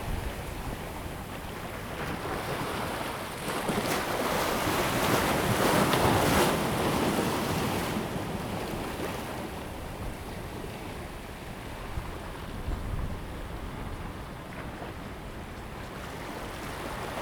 In the fishing pier, Very large storm day
Zoom H2n MS +XY
9 October, ~09:00